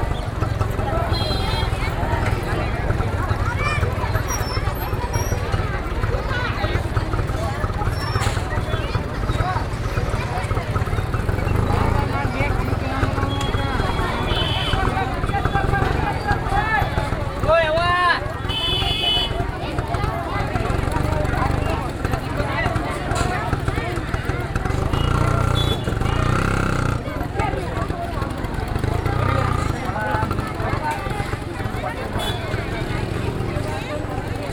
India, Karnataka, Market, Vegetables, Crowd, Binaural
Karnataka, India, 23 February 2011, 17:11